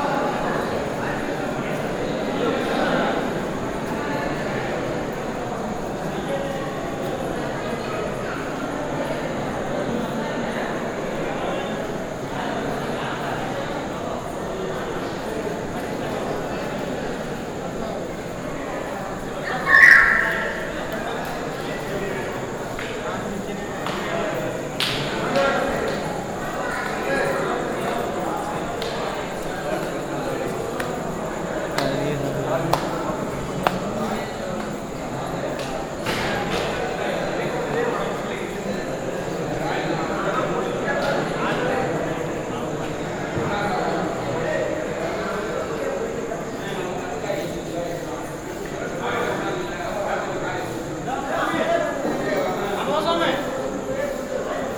meenakshi temple - madurai, tamil nadu, india - meenakshi temple
An evening stroll through the Meenakshi Temple compound.
Recorded November 2007